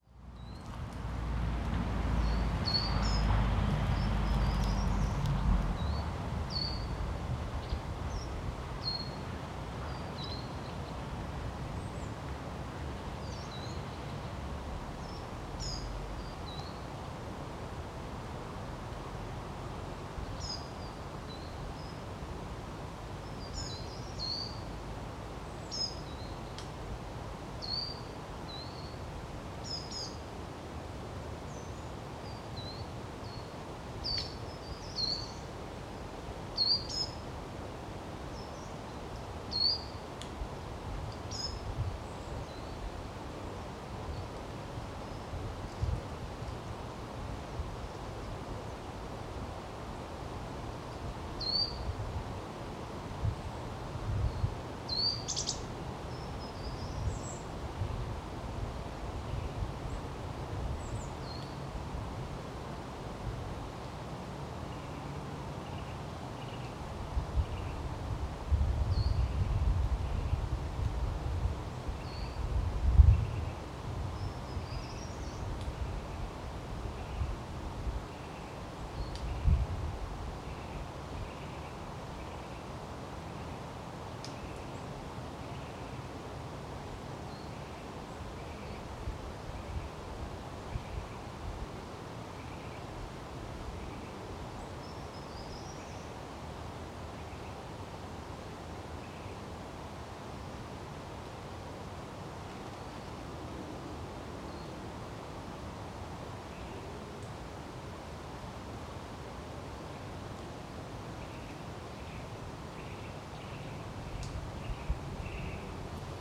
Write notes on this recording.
nel bosco delle cesane, col mio registratore digitale. ho equalizzato solo un po' le alte che alzando il gain al massimo tira su tutto il fruscio